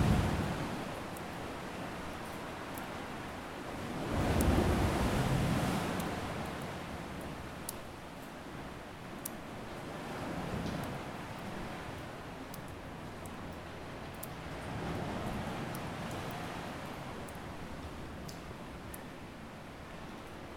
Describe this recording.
Field recording taken in a cave on Toroa Point.